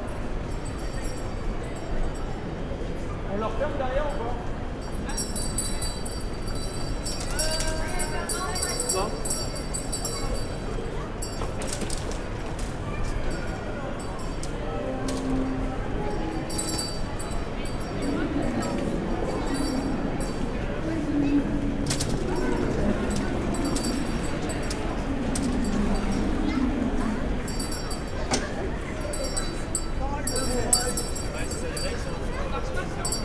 Santa-Claus is giving chocolates in the very busy Paris Montparnasse station.